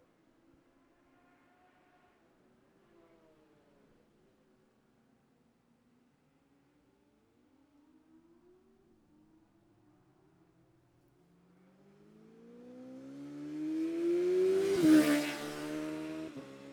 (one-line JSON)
{"title": "Jacksons Ln, Scarborough, UK - Gold Cup 2020 ...", "date": "2020-09-11 13:11:00", "description": "Gold Cup 2020 ... classic superbikes practice ... Memorial Out ... dpa s bag Mixpre3", "latitude": "54.27", "longitude": "-0.41", "altitude": "144", "timezone": "Europe/London"}